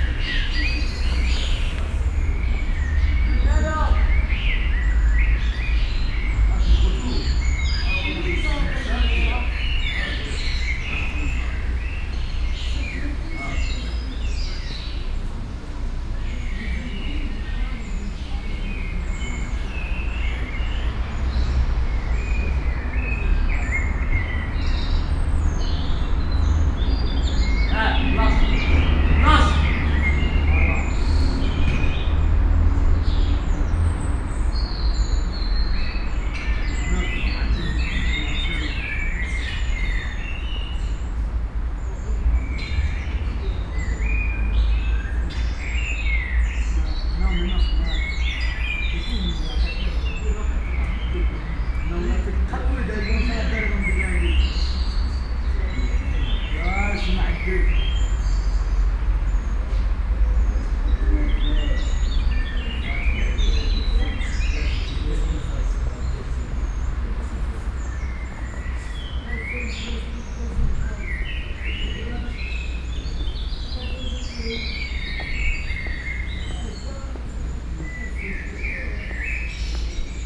Brussels, Parc Rue Marconi.
By JM Charcot.